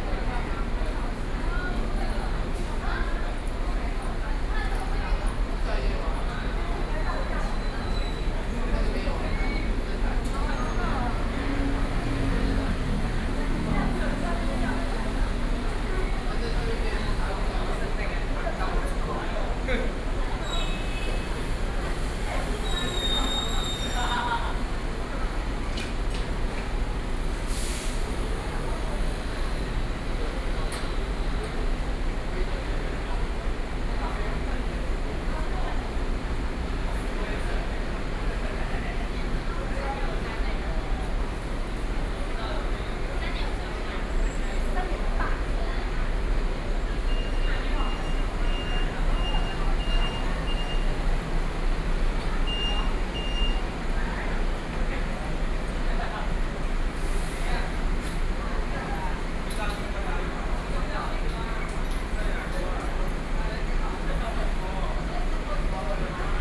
Zhongli City - Bus Terminus
in the Bus station hall, Sony PCM D50 + Soundman OKM II